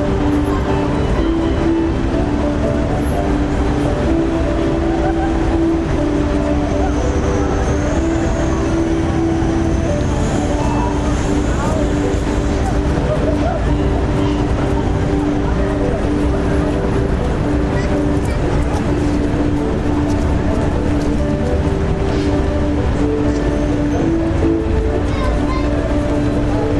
Sofia, street noise and musicians I - street noise and musicians I